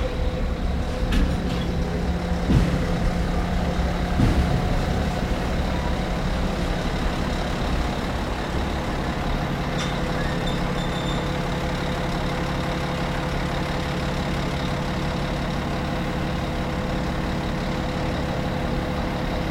Praha-Vršovice railway station (Nádraží Praha-Vršovice) is a railway station located in Prague 4 at the edge of Vršovice and Nusle districts, The station is located on the main line from Praha hlavní nádraží to České Budějovice, and the local line to Dobříš and Čerčany via Vrané nad Vltavou. This is the area under the Bohdalec hill with locomotive depo and turntable.
Binaural recording
17 July 2013, 16:08